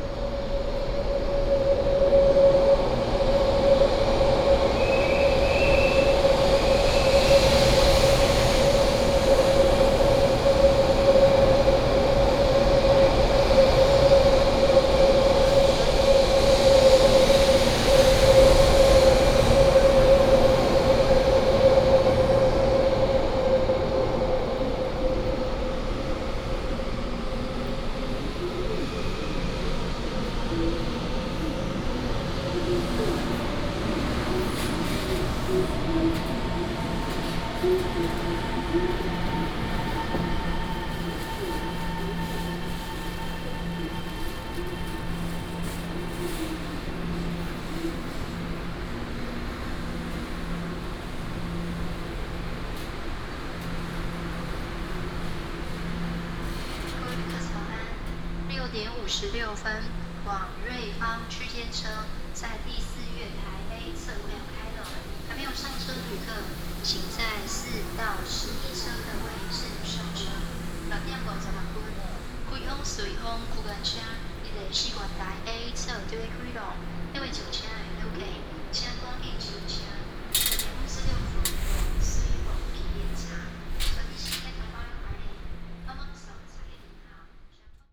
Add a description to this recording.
Walking in the station platform